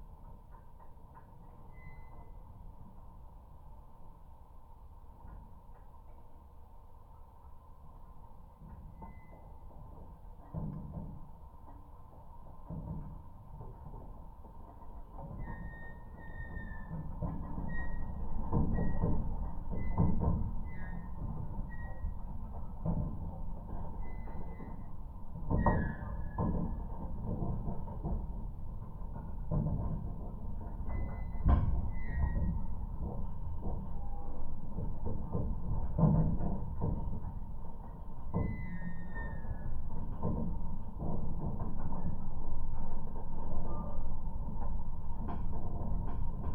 Užpaliai, Lithuania, old farm building
Geophone placed on metallic constructions of old farm building